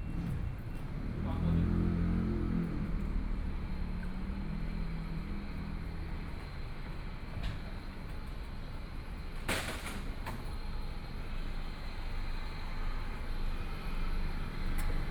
Walking through the small streets, Old ranch house in a residential area
Please turn up the volume a little. Binaural recordings, Sony PCM D100+ Soundman OKM II
Taipei City, Taiwan, April 2014